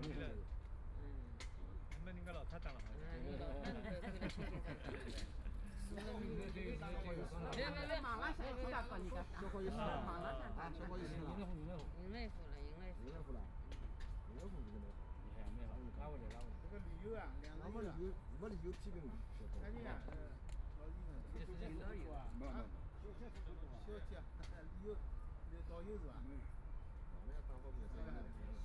Huangxing Park, Yangpu District - Play cards
A group of older people playing cards and chatting, Binaural recording, Zoom H6+ Soundman OKM II ( SoundMap20131122- 3 )
Yangpu, Shanghai, China, 22 November 2013, 16:45